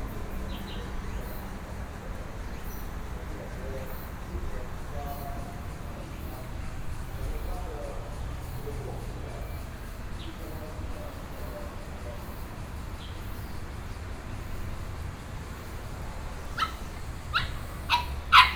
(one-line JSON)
{"title": "Hutoushan Park - walk", "date": "2013-09-11 09:05:00", "description": "walking into the Park, Broadcasting, Dogs barking, Sony PCM D50 + Soundman OKM II", "latitude": "25.00", "longitude": "121.33", "altitude": "127", "timezone": "Asia/Taipei"}